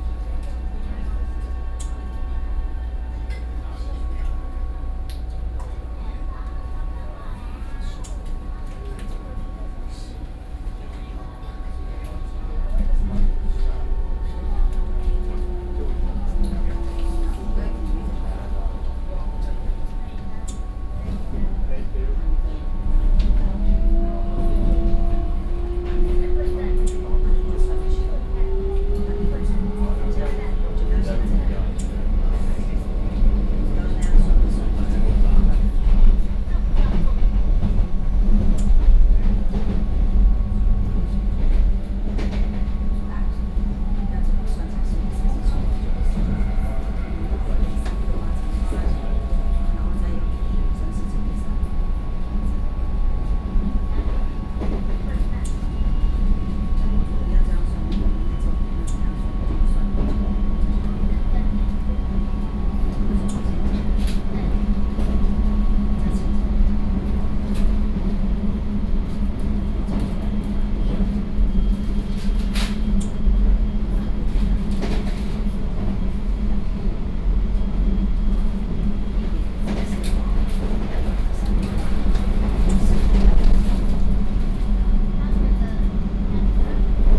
inside the Trains, Sony PCM D50 + Soundman OKM II
桃園縣 (Taoyuan County), 中華民國